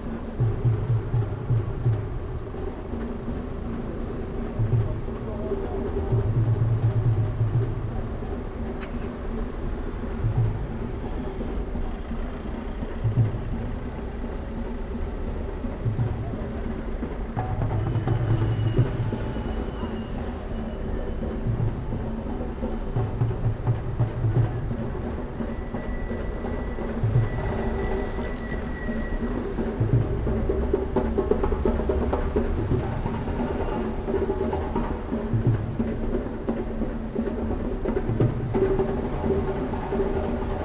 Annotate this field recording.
Today it was very hot and humid in Brussels. As we passed near to the Beurs, we heard amazing Djembe sounds and some guys had gathered on the steps to do amazing African drumming. We were on the other side of the street, and at one point I became fascinated by the way that the drumming sound intermittently dissolved into, and rose out, from the sound of the traffic. Even though it was a very busy, loud street, you can still also hear very slightly the applause of the sleepy folks sat on the steps near the drummers, hypnotised a little bit by the repetitive sound and the languid heat of the day. Recorded with EDIROL R-09 and simply the onboard microphones. Listen out for the nice bicycle bell passing by.